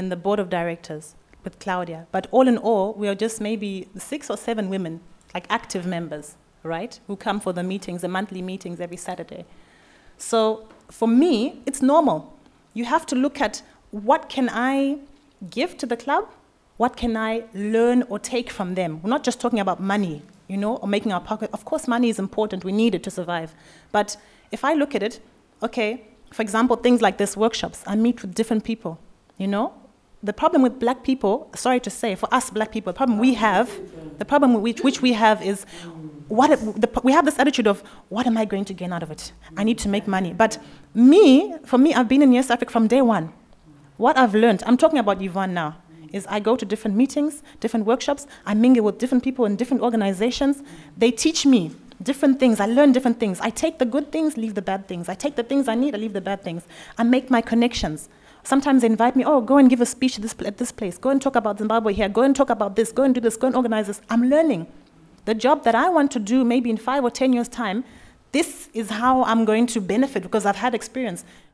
VHS, Hamm, Germany - Something is starting here...
these recordings were made during a workshop at the “Empowerment-Day” for Yes-Afrika e.V. on 5 July in Hamm. The forthcoming Yes-Afrika Women’s Forum and its celebratory playlist are outcomes of the women’s conversations you are listening to here....
Celebratory Playlist:
5 July 2014